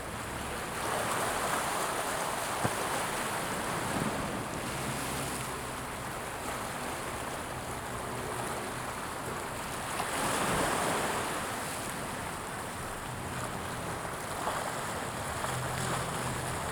Sound of the waves
Zoom H2n MS+H6 XY